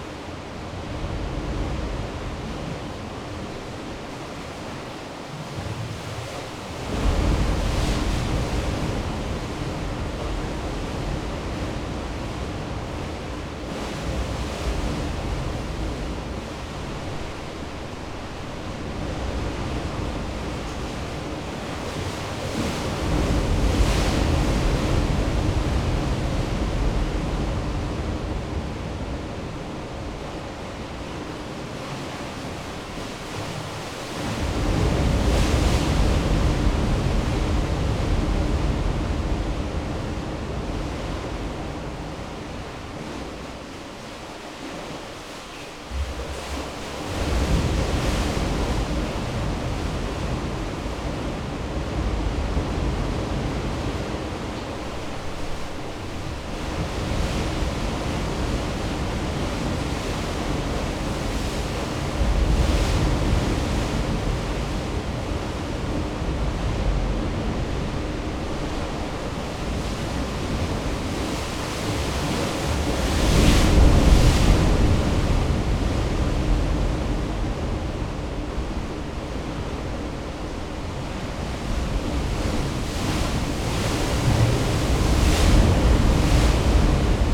near Kilchiaran Bay on the west coast of Islay is a wonderful blow hole. This recording is an extract from a recording made in a narrow fissure a couple of metres from the main blow hole. I suspended a pair of microphones (omnis based of Primo capsules) fixed to a coat hanger (thanks Chris Watson) into the cavern by about 2 metres recording to an Olympus LS 14.